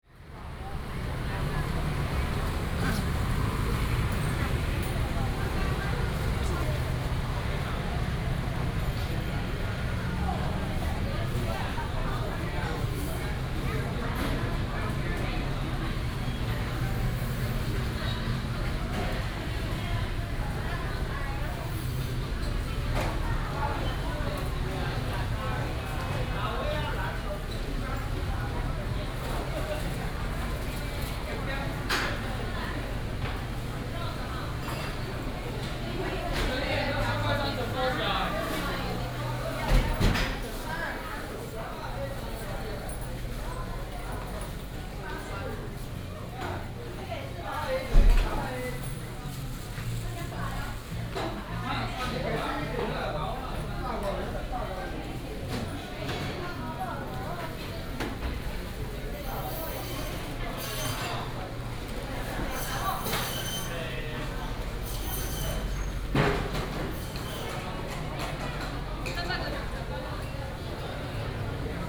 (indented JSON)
{
  "title": "二水鄉綜合市場, Ershui Township - Public retail market",
  "date": "2018-02-15 08:59:00",
  "description": "Walking in the Public retail market, lunar New Year, Traffic sound, Bird sounds\nBinaural recordings, Sony PCM D100+ Soundman OKM II",
  "latitude": "23.81",
  "longitude": "120.62",
  "altitude": "87",
  "timezone": "Asia/Taipei"
}